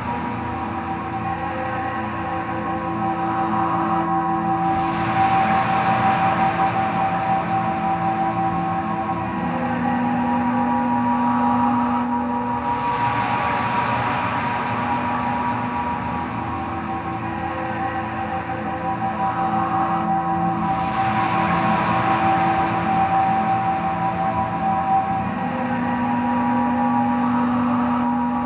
Room recording in a container studio with natural reverb and faint cicadas. Made with a Marantz PMD661 & a pair of DPA 4060s.
TX, USA